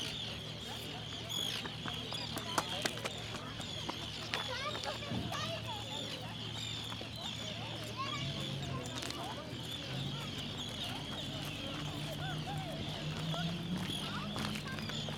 East, Island - Lake Jokulsárlon with seagulls and tourists